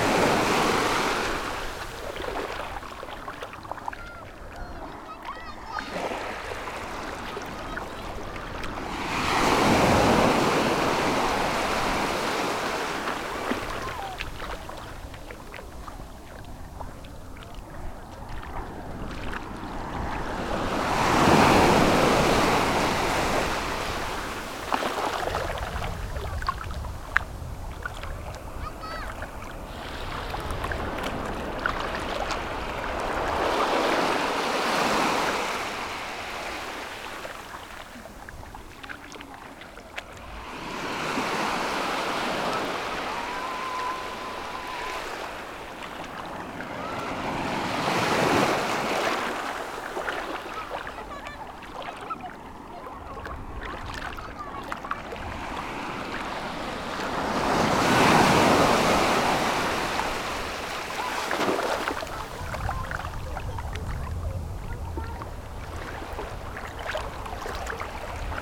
Recording of the sea at La-Tranche-Sur-Mer beach, walking during 2,5 kilometers going east. As the beach is a curve, there's variation. It's low tide, the sea is very quiet. Some children are playing in the water. Also, there's very very much wind, as often at the sea. Recording is altered but I think it's important as the sea feeling is also the iodized wind.
24 May 2018, 17:00